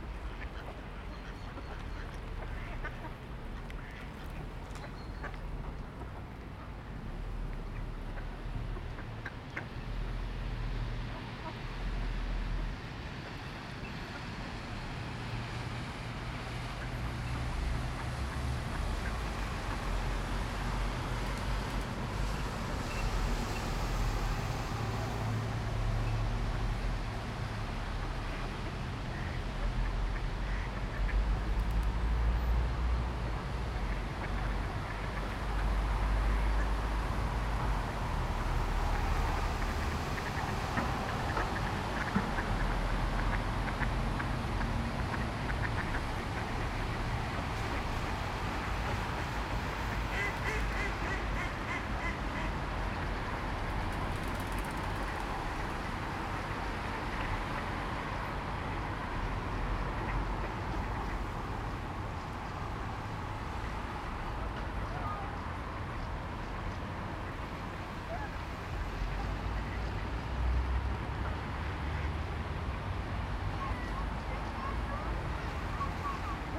ducks quacking, cars and busses humming by, people voices and footsteps.
recorded with H2n, 2CH, handheld, windscreen